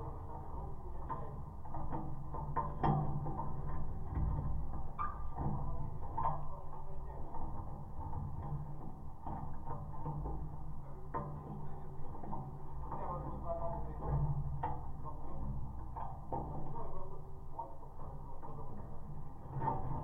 Winter skiing tracks and lifts. Geophone on flag stick.
Vilniaus miesto savivaldybė, Vilniaus apskritis, Lietuva, 17 October, 14:05